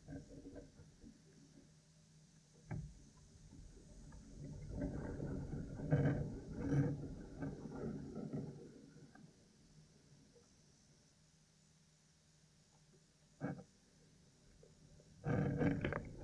Contact mic recording from a wood plank fence that sits in a clearing capturing the sound of vegetation scraping its surface when the wind gusts